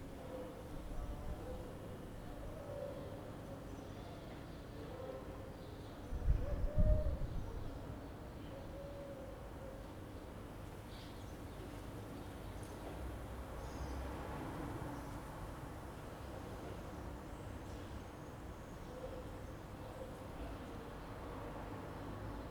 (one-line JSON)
{"title": "Carrer de Joan Blanques, Barcelona, España - 2020 March 23 BCN Lockdown", "date": "2020-03-24 09:15:00", "description": "Recorded from a window during the Covid-19 lockdown. It's a sunny spring morning with birds singing and some movement of people, even on the face of the lockdown.", "latitude": "41.40", "longitude": "2.16", "altitude": "65", "timezone": "Europe/Madrid"}